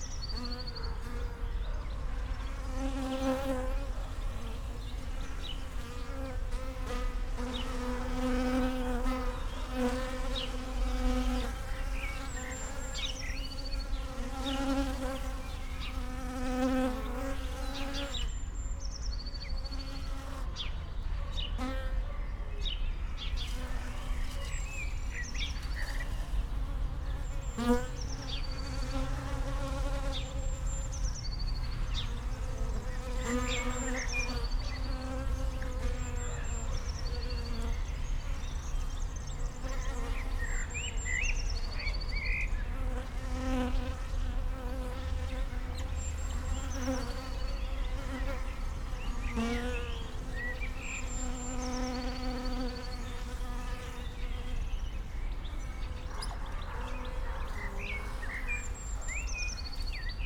Friedhof Lilienthalstr., Berlin, Deutschland - bees at the water container
cemetery Friedhof Lilienthalstr., Berlin, bees gathering at a water container became attracted to the microphones
(Sony PCM D50, Primo EM272)
25 April 2021, ~14:00